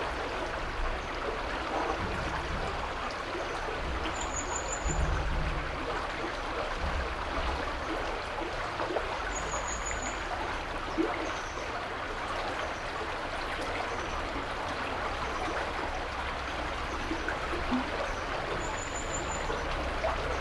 river Alzette flowing, heard under the brigde
(Sony PCM D50)